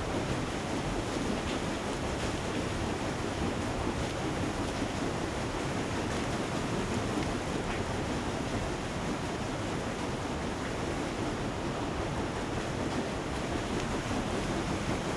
Stifsbäckerei St Peter, Salzburg, Österreich - Wasser Energie
Salzburg, Austria, April 2007